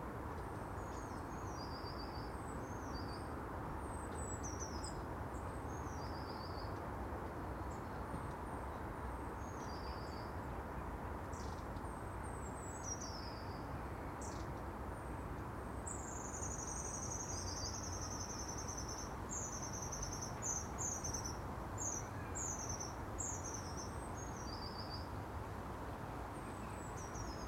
Contención Island Day 6 inner northeast - Walking to the sounds of Contención Island Day 6 Sunday January 10th
The Drive Moor Crescent Moorfield Lodore Road
Stood back from the edge of open grass
still
I am not obvious
a dog noses by then sees me
and freezes
then barks
to be chastised by its owner
England, United Kingdom, 10 January 2021